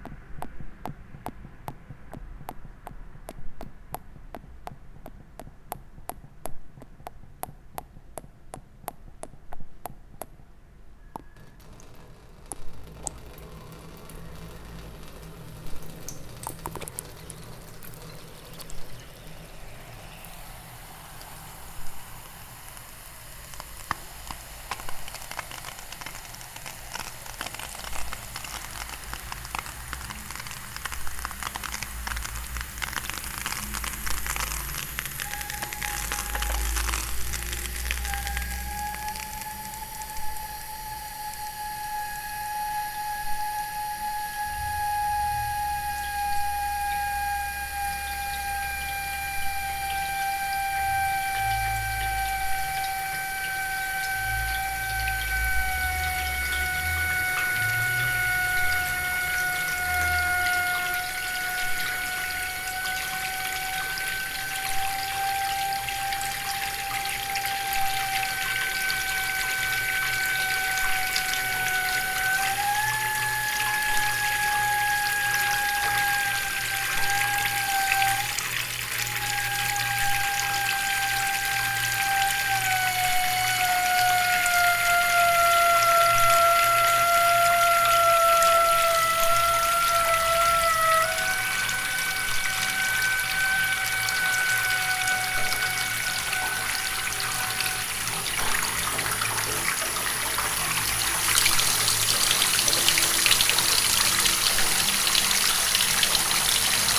People have nightmares sometimes. For example dreaming about a stranger, who tries to get into their apartment the whole night. Then you don know if it was a bad dream or reality. If you\ll find old abandoned photographs in the frames in the street, you will take them and hang them up on the wall. Then you can be sure, that you will get the dreams of new visitors, whose portraits are hanging on the wall. Or you will dream about their death. Ive put on such photographs on the wall and somebody is now moaning in the bathroom.
Prague, Czech Republic, 14 August 2009